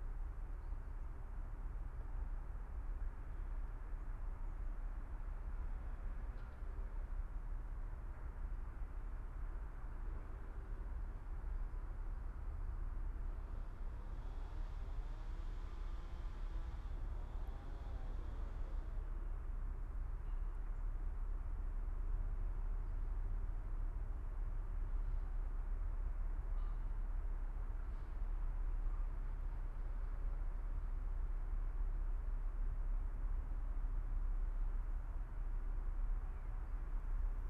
ICE station, Limburg an der Lahn, Deutschland - high speed train
ICE train passing-by at high speed
(Sony PCM D50, DPA4060)
Limburg, Germany, 29 October 2014